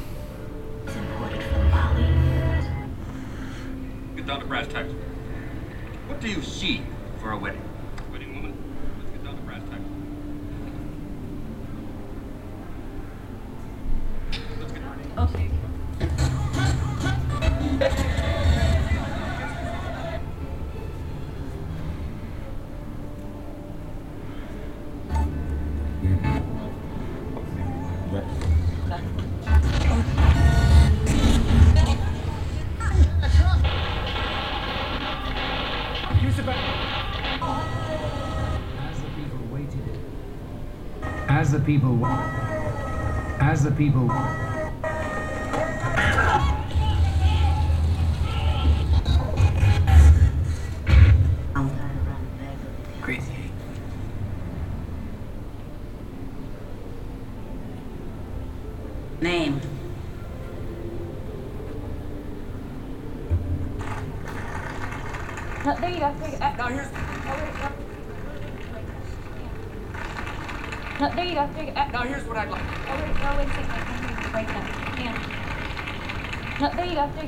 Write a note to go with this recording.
inside a round circle media installation that allows the visitor to select 3 D animated screen scenes and follow picture lines that have certain emotional content by picture zapping thru a big international tv scene archive, soundmap d - topographic field recordings and social ambiences